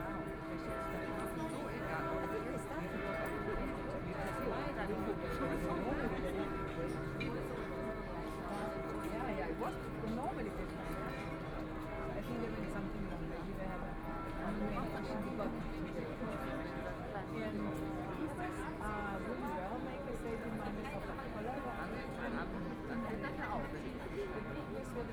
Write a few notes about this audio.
In the Square, Church bells, A lot of tourists